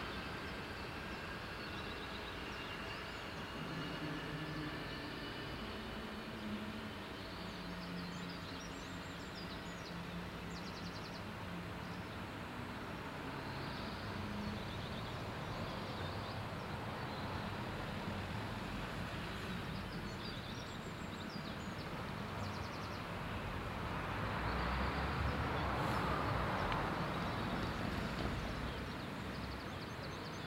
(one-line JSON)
{"title": "Gare de Poix-Saint-Hubert, Saint-Hubert, Belgique - Station ambience", "date": "2022-05-28 08:50:00", "description": "Railway crossing bell, train coming, a few birds.\nTech Note : SP-TFB-2 binaural microphones → Olympus LS5, listen with headphones.", "latitude": "50.02", "longitude": "5.29", "altitude": "322", "timezone": "Europe/Brussels"}